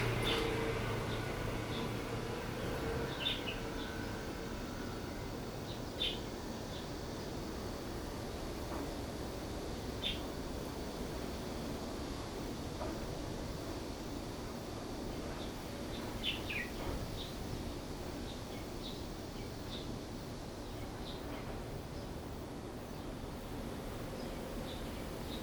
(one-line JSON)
{"title": "福正宮, 大溪區Taoyuan City - wind and bird", "date": "2017-08-08 16:46:00", "description": "Small temple, wind, bird, Construction sound\nZoom H2n MS+XY", "latitude": "24.91", "longitude": "121.31", "altitude": "66", "timezone": "Asia/Taipei"}